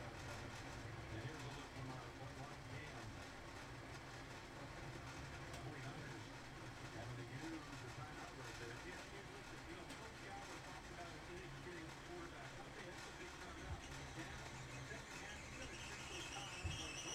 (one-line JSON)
{"title": "Qualla Dr. Boulder CO - TeaTime!", "date": "2013-02-03 19:09:00", "latitude": "39.99", "longitude": "-105.23", "altitude": "1624", "timezone": "America/Denver"}